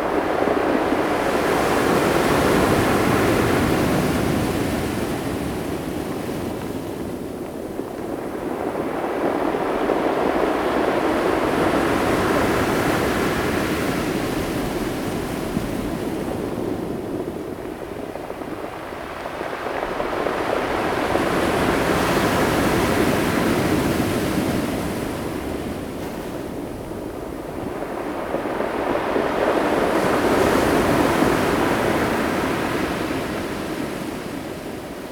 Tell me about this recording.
Waves sound, On the beach, Zoom H2n MS+XY +Spatial Audio